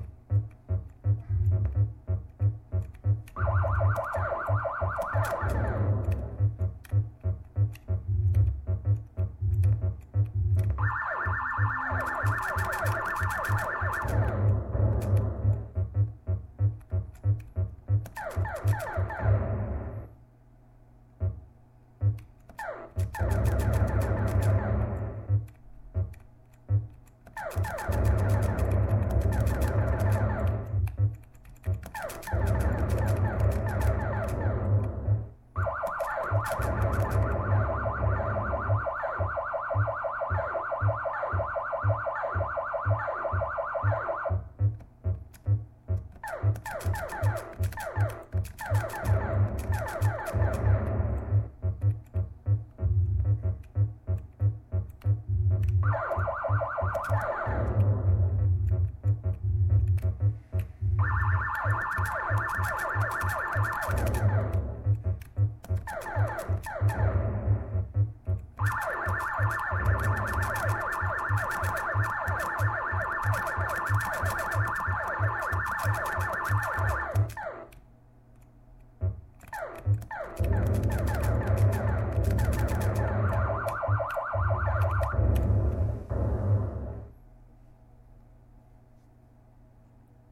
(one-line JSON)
{"date": "2010-05-22 17:57:00", "description": "playing with asteroids, glorious electronic game of my youth-online version", "latitude": "42.85", "longitude": "13.59", "altitude": "147", "timezone": "Europe/Rome"}